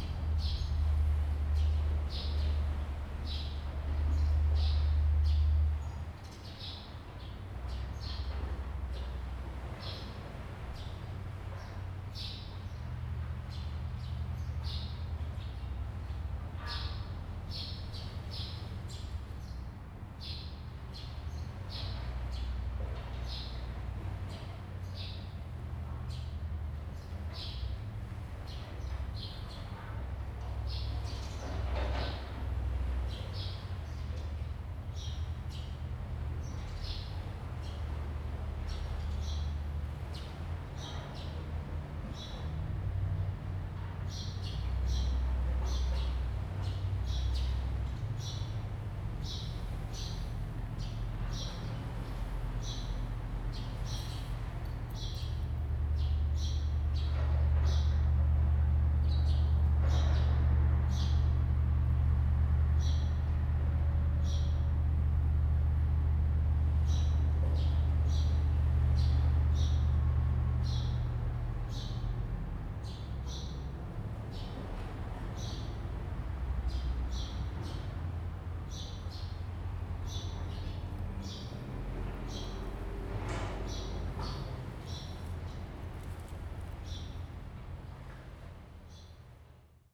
In the gazebo, Construction, Birds singing
Zoom H2n MS +XY